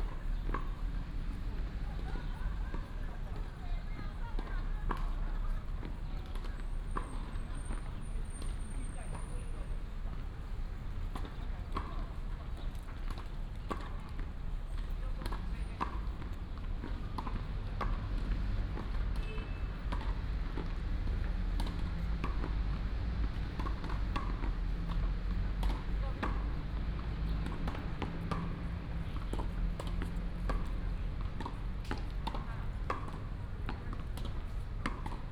Qingnian Park, Taipei City - in the Tennis driving range
in the Park, in the Tennis driving range, traffic sound